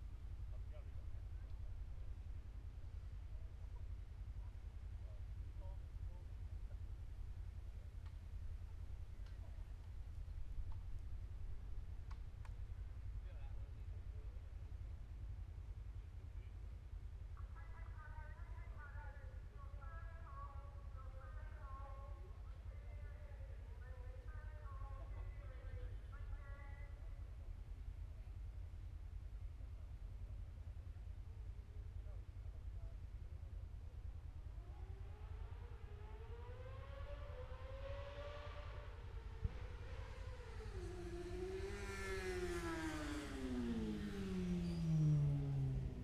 Scarborough District, UK - Motorcycle Road Racing 2016 ... Gold Cup ...
Sighting laps ... Mere Hairpin ... Oliver's Mount ... Scarborough ... open lavalier mics clipped to baseball cap ...